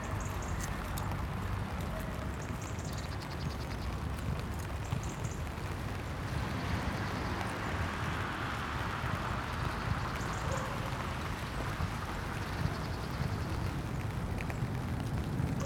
Contención Island Day 10 inner north - Walking to the sounds of Contención Island Day 10 Thursday January 14th
High Street
In the car park two cars sit
with their engines running
I carry on into the park
Stand under my umbrella
a light sleet falling
Blackbirds chase
on the far side of the grass
England, United Kingdom